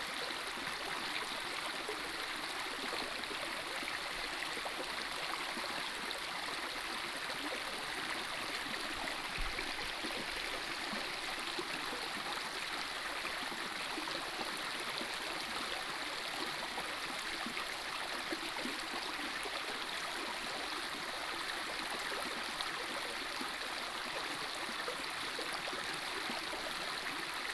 種瓜坑溪, 成功里 - Stream sound
In a small stream
28 April 2016, 10:20